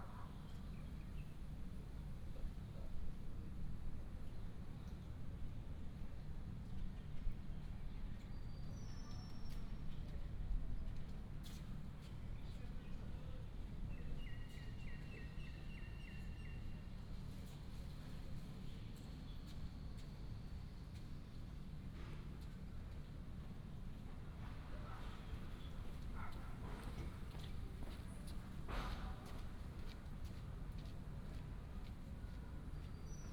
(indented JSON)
{
  "title": "普慶公園, Zhongli Dist., Taoyuan City - in the Park",
  "date": "2017-11-29 09:09:00",
  "description": "in the Park, Traffic sound, Birds and Dog, The plane passed by, Binaural recordings, Sony PCM D100+ Soundman OKM II",
  "latitude": "24.95",
  "longitude": "121.25",
  "altitude": "153",
  "timezone": "Asia/Taipei"
}